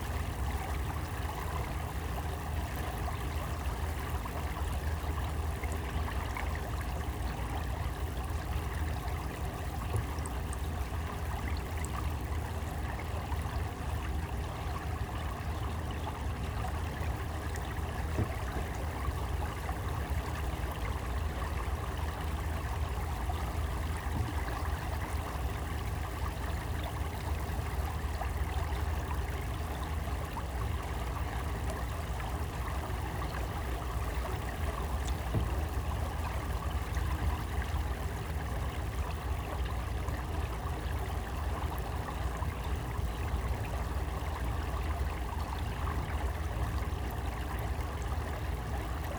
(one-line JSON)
{"title": "Electric boat ripples, Horsey Broad, Great Yarmouth, UK - Electric boat rippling through water channel in reeds", "date": "2022-03-22 13:43:00", "description": "A beautiful March day on a slow moving small electric boat hired for 2 hours to enjoy the broads and channels in this peaceful landscape. Several Marsh Harriers were gliding overhead, sometimes displaying to each other. The electric motor sound is audible but compared to diesel thankfully quiet.", "latitude": "52.73", "longitude": "1.61", "altitude": "1", "timezone": "Europe/London"}